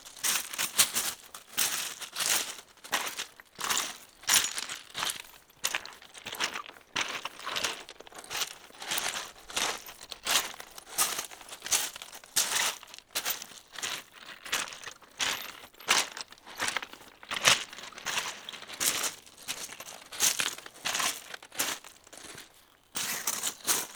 Berneval-le-Grand, France - Walking on pebbles
Walking on the big pebbles of the Penly beach near the small village called Berneval.
2 November 2017, 17:50